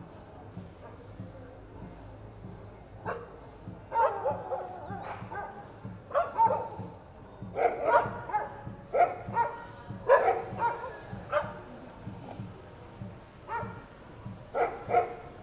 Valparaíso is a city of dogs, they're all over the place. Here at Plaza el Descanso, an bunch of them seems to have fun chasing cars at night. It's not clear what exactly attracts them, maybe the sound of the brakes, tyres or something inaudible to humans, however, they attack really tough, biting the wheels jumping against he running vehicle. People seem to be used to this spectacle, it doesn't draw much attention at all.